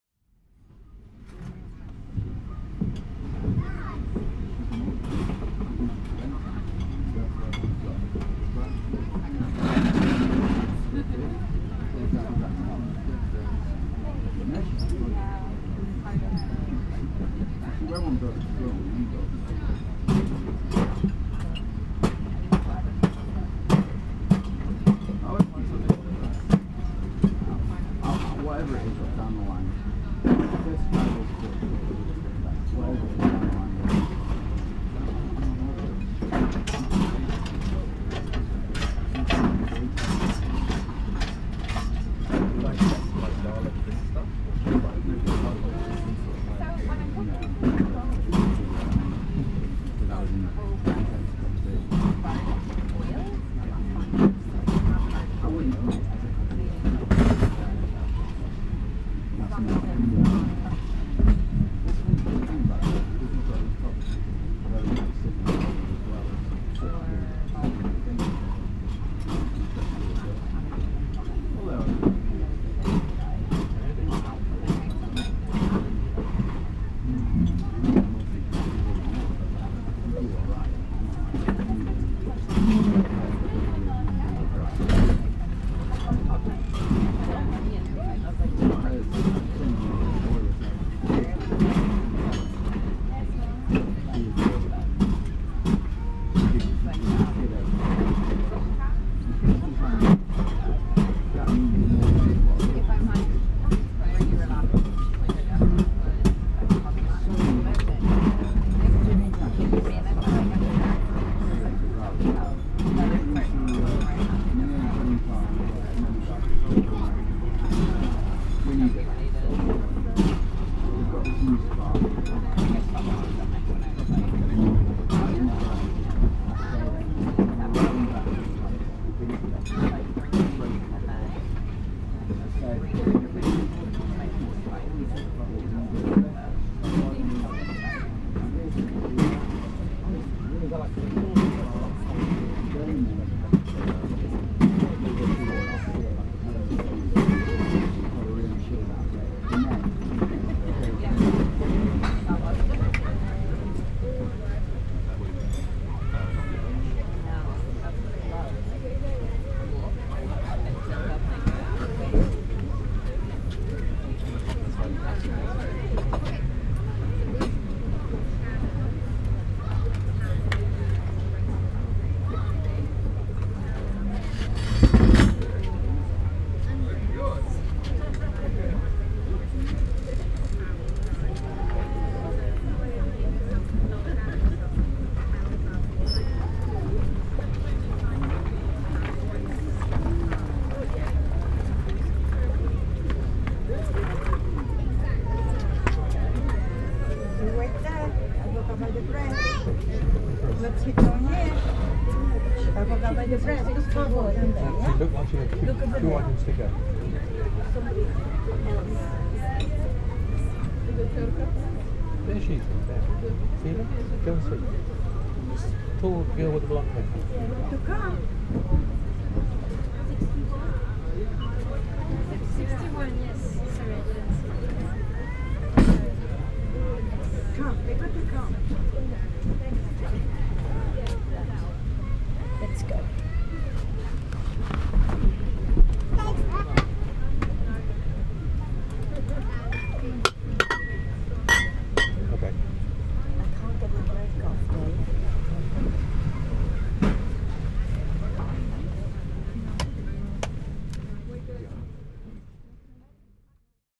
At the Pavillion Cafe, Victoria Park, London. UK - Pav Caff
The general ambient sitting outside the cafe looking out over the lake. There is much digging around in the freezer for ice cubes, voices and distant traffic.
MixPre 3 with 2 x Beyer Lavaliers.